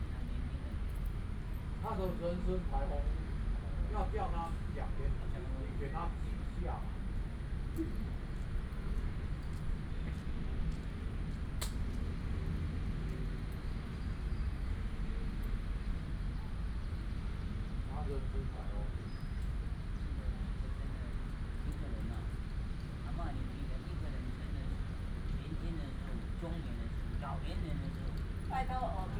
文昌公園, Taoyuan Dist., Taoyuan City - in the Park
A group of old people in the chat, Traffic sound, in the Park